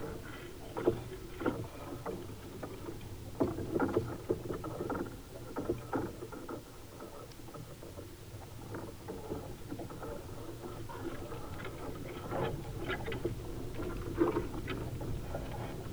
shedded poplars X gusts
...leafless poplars in 3-4ms gusts...
강원도, 대한민국, 16 January 2022, 14:00